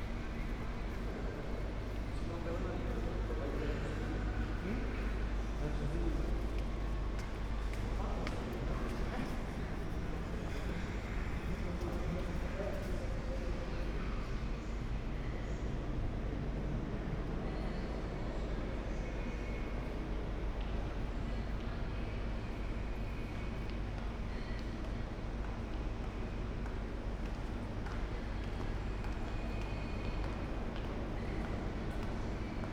a short walk from the pier to the metro station, with focus on the station ambience
(Sony PCM D50, Primo EM172)
Pireas, Greece, 5 April 2016